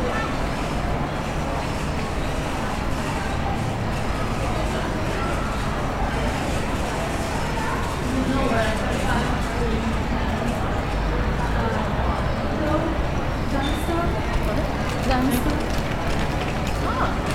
Commercial Court
Another transitional recording from being observational to interactive with locals who were asking me (a guy with a recorder, dressed for warmth, tripods, and wires) about the information on the bars in the area. I did find it amusing talking with the couple and also confusing when half of the area’s bars were open (later to find out only bars that served food can reopen). It is bizarre to compare one half of the area to another; this area usually thrives with foot and taxi traffic since it is one of the highlights of the city. It shows that we are not at a point where anything is returning to normal any time soon. Also, worth mentioning, this was the first weekend that bars and pubs were allowed to reopen (note: only that served food), so surprising to see that there were still people heading out for the night.
County Antrim, Northern Ireland, United Kingdom, 4 July, 18:00